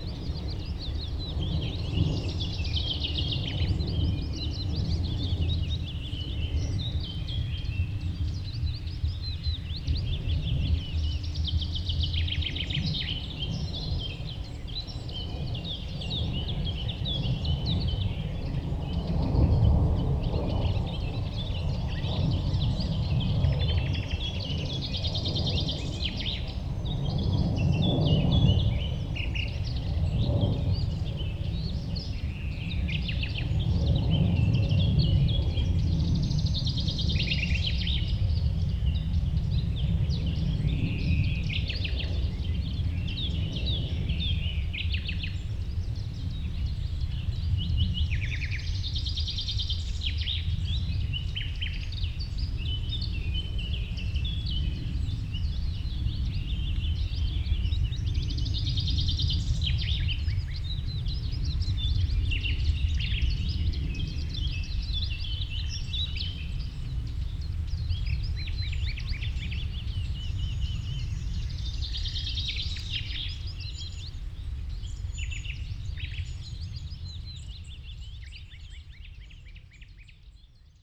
a nightingale and other birds at the promenade